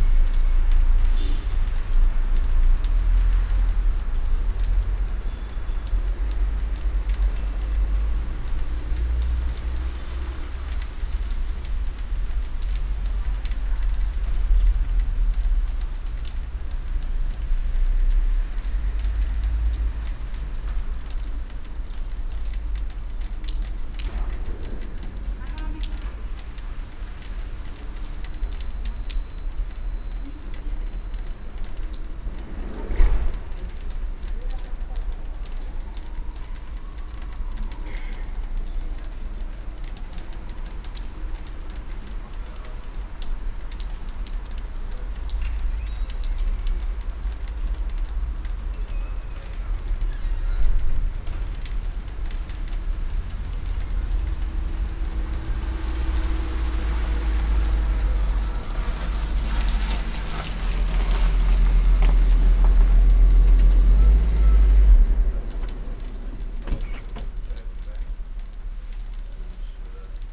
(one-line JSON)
{"title": "budapest, tomp utca, melting water drops from the house roof", "description": "morning time - ice and snow melting\nand dropping fromm the house roofs - some cars and passengers passing bye slowly\ninternational city scapes and social ambiences", "latitude": "47.48", "longitude": "19.07", "altitude": "108", "timezone": "Europe/Berlin"}